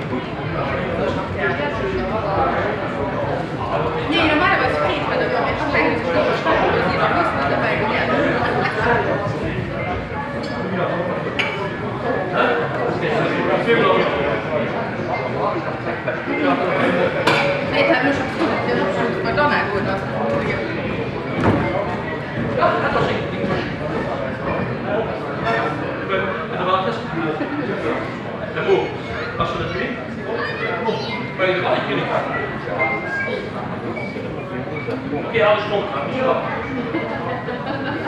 Hosingen, Luxemburg - Hosingen, nature park house, summer fair, beer tent
Auf dem Sommer-Familienfest des Naturpark Hauses in einem Bierzelt.
Der Klang von Stimmen. Im Hintergrund Klänge des Wasserorchesters.
At the summer family fair of the nature park house inside a beet tent. The sound of voices. In the background sounds of the water orchestra.